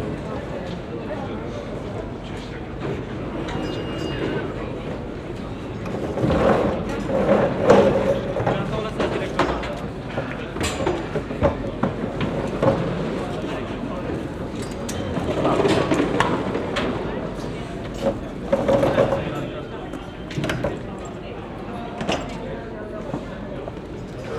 Inside the small airport of Cluj. The sounds at the security check in. Plastic boxes on the conveyer belt, electronic beeps from the body control advices from the security team and voices of the passengers .
International city scapes - topographic field recordings and social ambiences